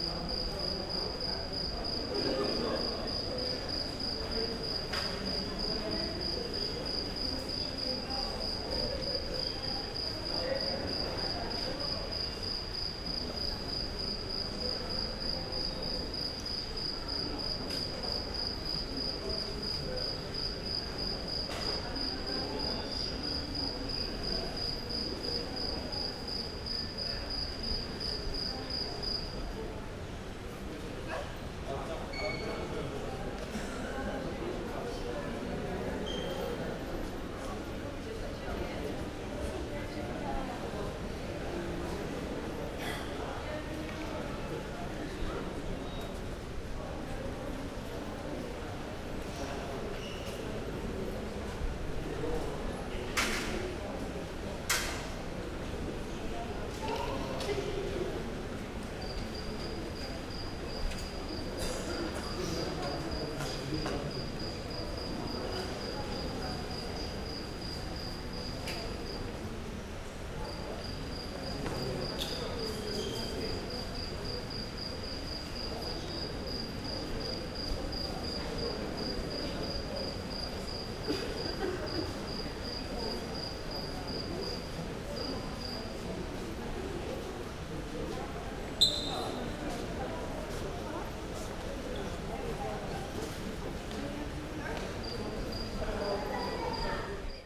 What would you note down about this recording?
a cricket got inside one of the airport halls. passengers waiting for their luggage.